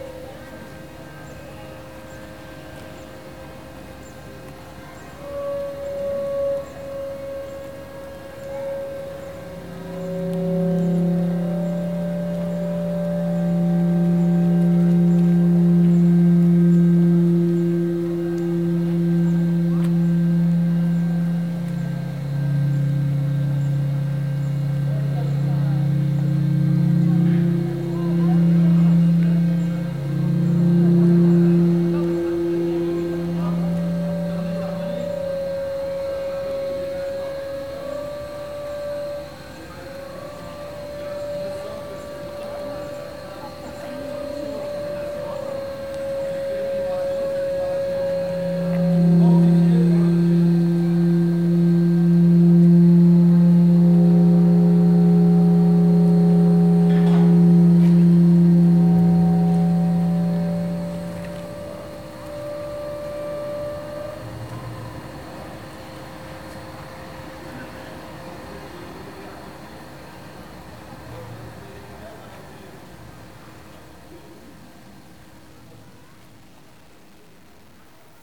avenue de lAérodrome de, Toulouse, France - vertical resonator
vertical resonator
Sub-low by chemistry of compressed air, oxygenate and propane mixture in steel tubes
Captation : zoom H4n
France métropolitaine, France, May 2021